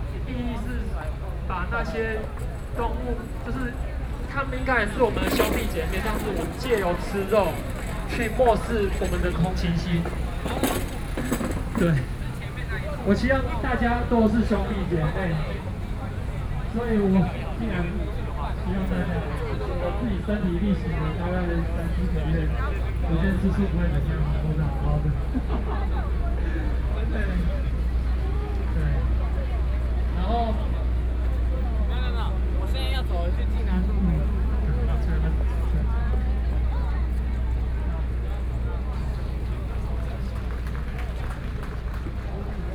Walking through the site in protest, People and students occupied the Legislature
Binaural recordings
Taipei City, Taiwan, 20 March 2014, 22:44